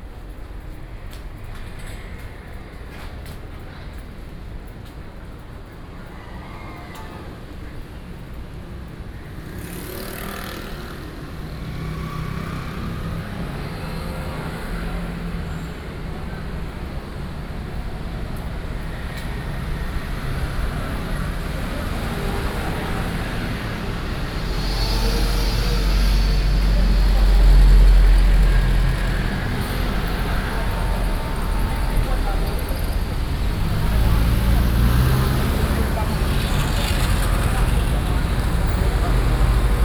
Bo’ai 1st St., Shulin Dist., New Taipei City - Walking in a small alley
Walking in a small alley, Traffic Sound
Sony PCM D50+ Soundman OKM II